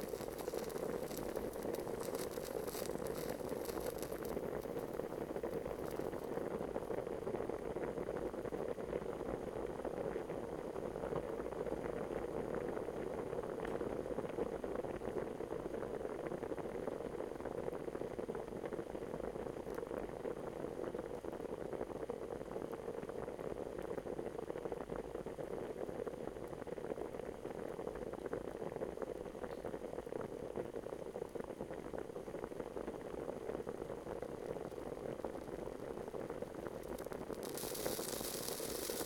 Poznan, Mateckiego street, kitchen - boiling eggs
boiling eggs in a small pot, drops of water trapped under the pot sizzling as they turn into vapor as well as rumble of boiling water as if a horde of horses were racing in the distance.
27 November 2012, 08:01